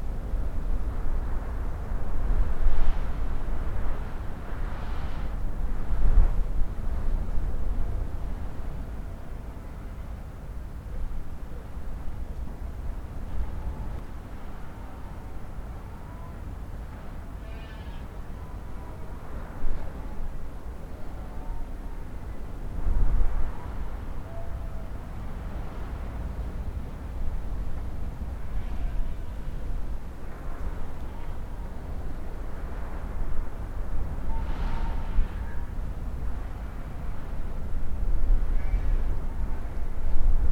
Abbotsbury, Dorset, UK - windy day outside St Catherine Chapel